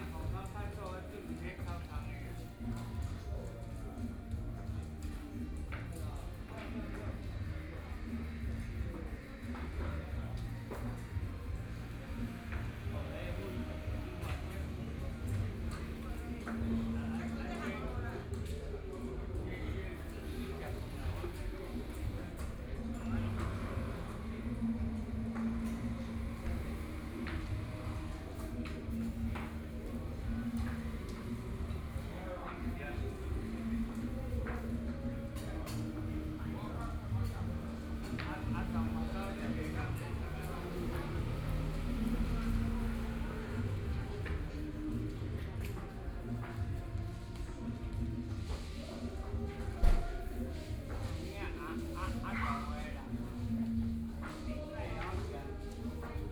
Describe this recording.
Activity center for the elderly in the community, Entertainment elderly, Binaural recordings, Zoom H4n+ Soundman OKM II